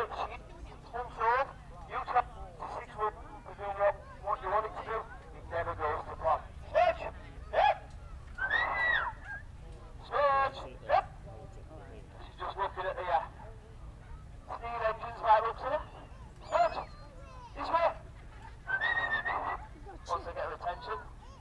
Burniston, UK - Falconry display ... Burniston and District Show ...
Smudge the American barn owl ... falconer with radio mic through the PA system ... lavalier mics clipped to baseball cap ... warm sunny morning ...
29 August 2016, 11:15